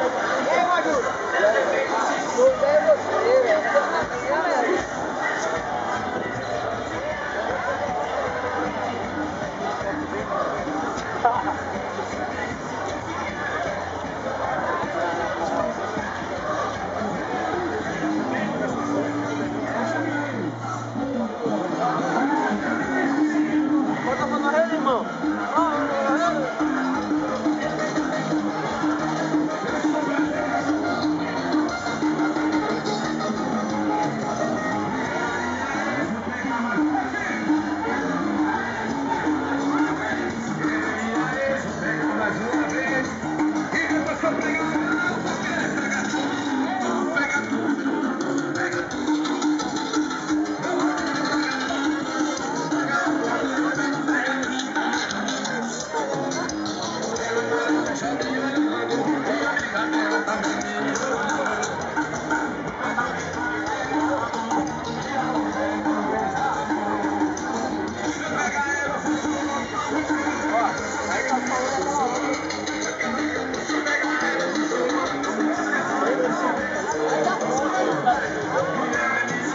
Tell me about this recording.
Típica comemoração popular na principal praia urbana de João Pessoa, após anunciado o vencedor das eleições para prefeito. Gravado andando com meu Lumix FZ 38. [A tipical popular commemoration to celebrate the new city's Mayor. Recorded walking with a Lumix FZ 38.]